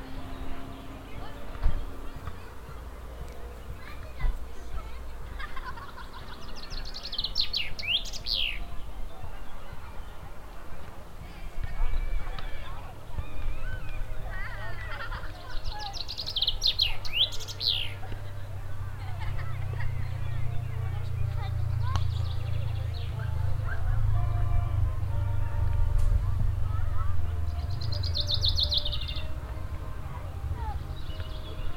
rurberg, lakeside at the open swim areal
walking on the meadow of the open swim areal on a saturday evening.
In the distance the local brass orchestra at the seasonal public ministry celebration
soundmap d - social ambiences and topographic field recordings
Simmerath, Germany, 28 June 2010